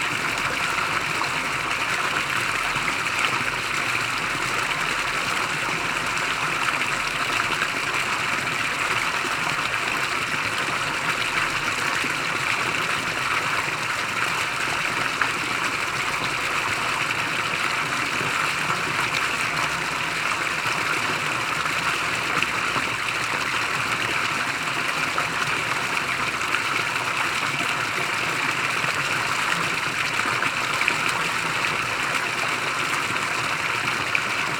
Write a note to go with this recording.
Fontaine Place Dugas à Thurins